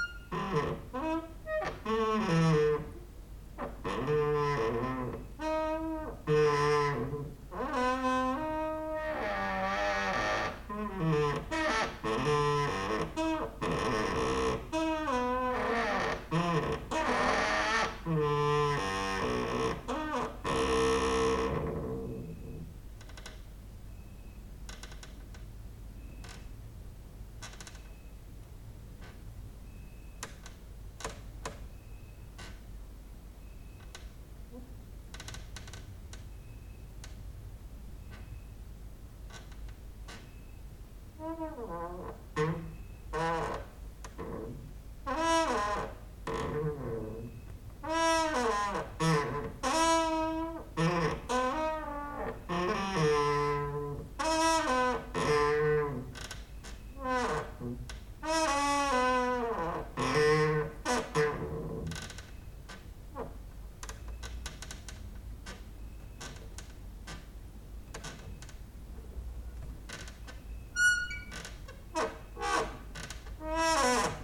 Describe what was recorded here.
cricket outside, exercising creaking with wooden doors inside